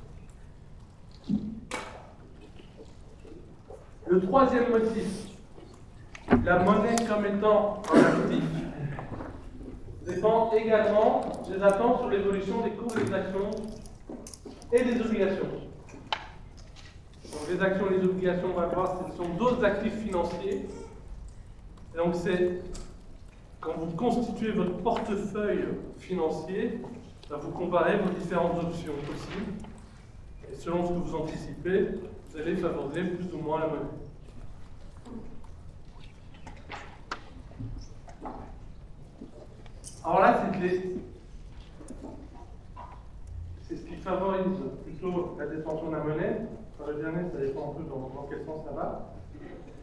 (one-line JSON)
{"title": "Centre, Ottignies-Louvain-la-Neuve, Belgique - A course of economy", "date": "2016-03-11 14:15:00", "description": "In the big Agora auditoire, a course of economy.", "latitude": "50.67", "longitude": "4.61", "altitude": "115", "timezone": "Europe/Brussels"}